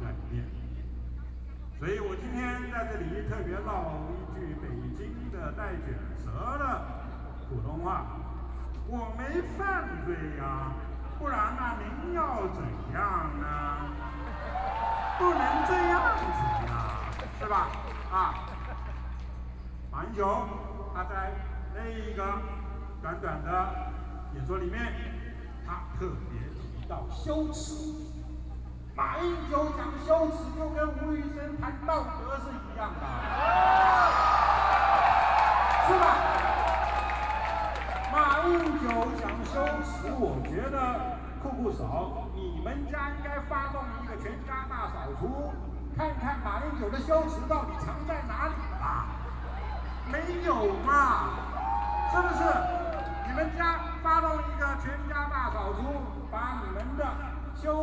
Jinan Rd., Taipei City - Protest Speech
Former deputy chief editor of the newspaper, Known writer, Witty way to ridicule the government's incompetence, Binaural recordings, Sony PCM D50 + Soundman OKM II